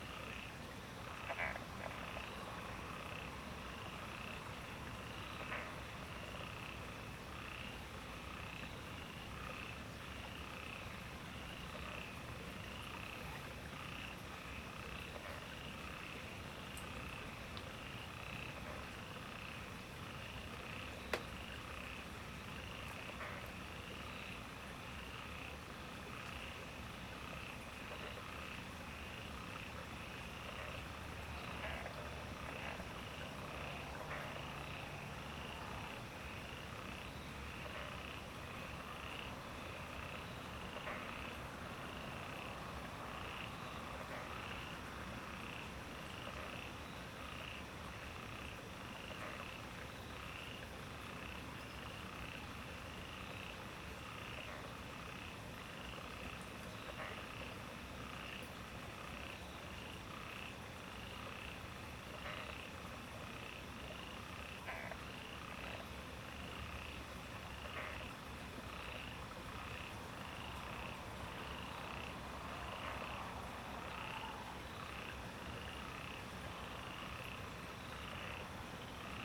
TaoMi Line, 埔里鎮桃米里, Nantou County - Frogs chirping
The sound of water, Frogs chirping
Zoom H2n MS+XY
Puli Township, 桃米巷52-12號, 26 March 2016, ~21:00